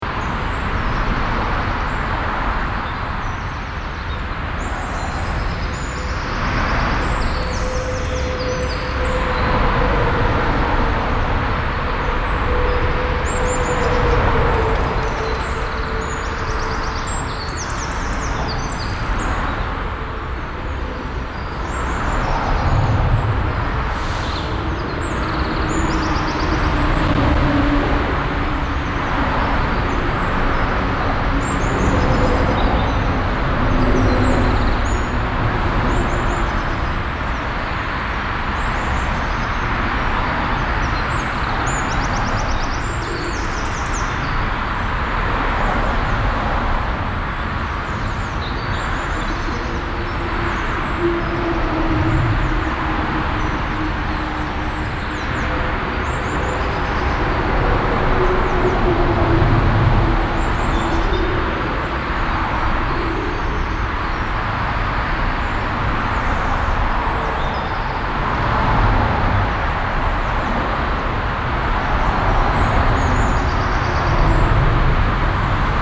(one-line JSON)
{"title": "essen, emscherstraße, unter autobahnbrücke - Essen, Emscher street under highway bridge", "date": "2014-04-09 08:00:00", "description": "A second recording at the same spot - some years later\nEine zweite Aufnahme am selben Ort, einige Jahre später\nProjekt - Stadtklang//: Hörorte - topographic field recordings and social ambiences", "latitude": "51.51", "longitude": "7.03", "altitude": "43", "timezone": "Europe/Berlin"}